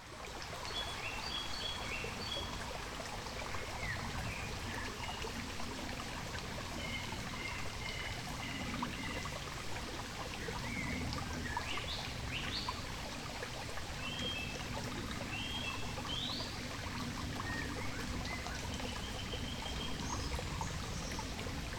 Unkel, Landstrasse - kleiner Waldbach, little creek in the wood
07.05.2009 geplätscher eines kleinen waldbaches, vögel, flugzeug, auto / little creek in the wood, birds, a plane, a car
Unkel, Germany, 7 May